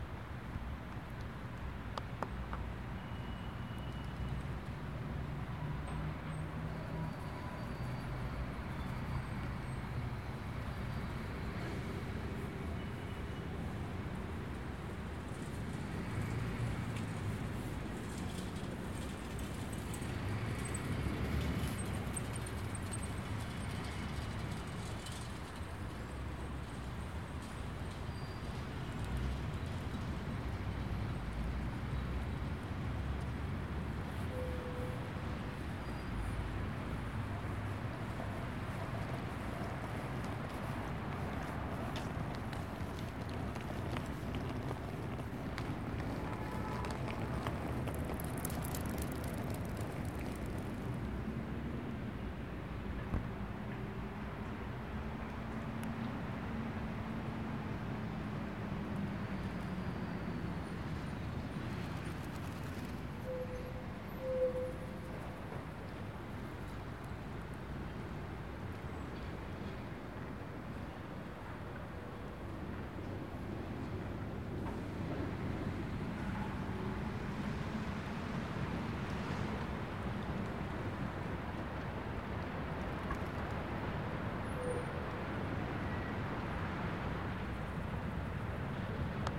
park Moniuszki ul. Kilinskiego Lodz